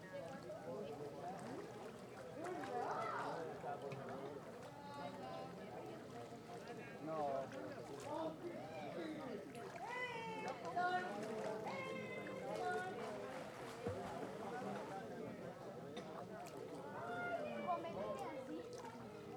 Carrer Sta. Margalida, Tárbena, Alicante, Espagne - Tàrbena - Espagne - Piscine Municipale Ambiance
Tàrbena - Province d'allicante - Espagne
Piscine Municipale
Ambiance
ZOO F3 + AKG C451B
Alacant / Alicante, Comunitat Valenciana, España